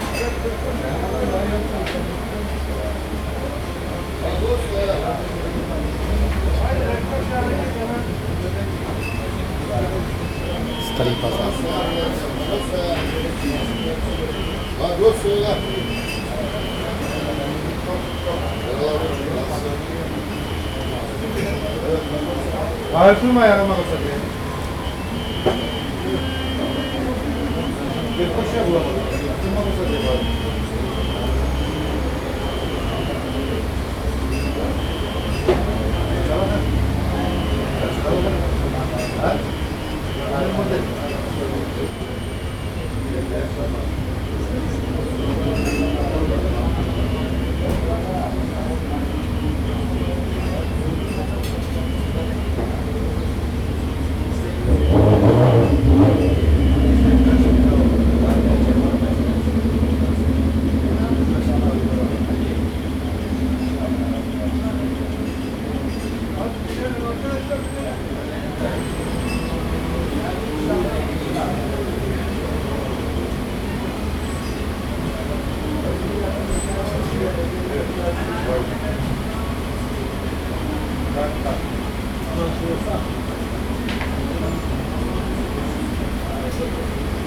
Old Bazar in Girne - from inside
Interieur of the Old Bazar, almost empty